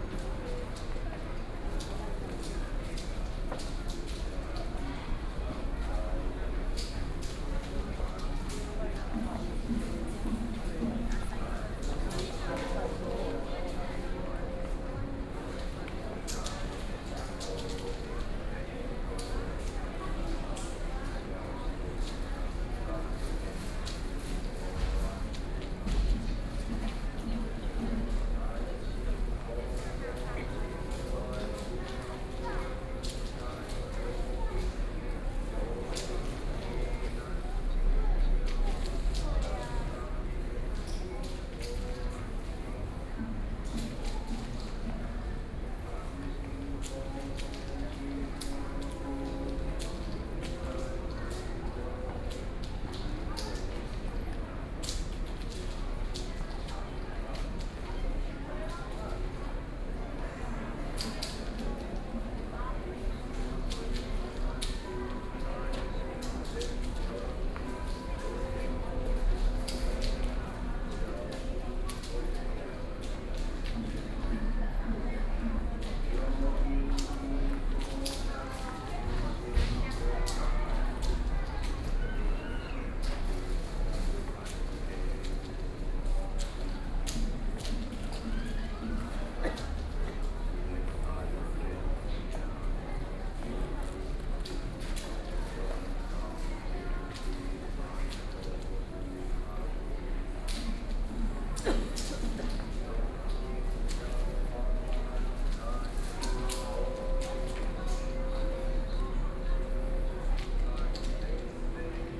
行天宮, Taipei City - Walking in the temple
Walking in the temple, Environmental sounds
Binaural recordings